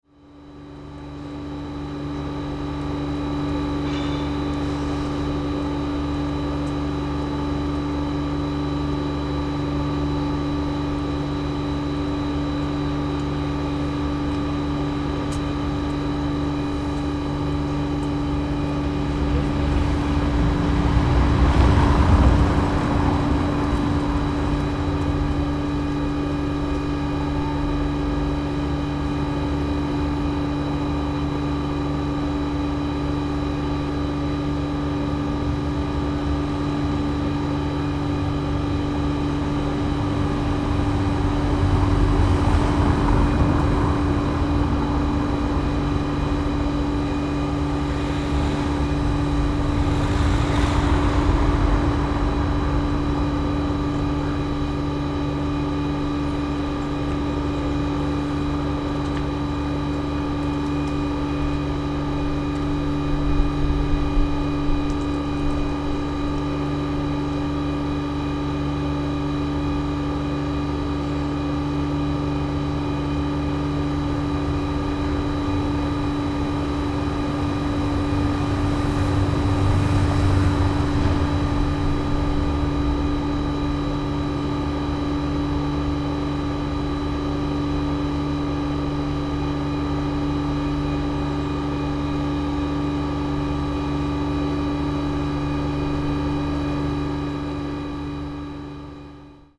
the city, the country & me: march 17, 2009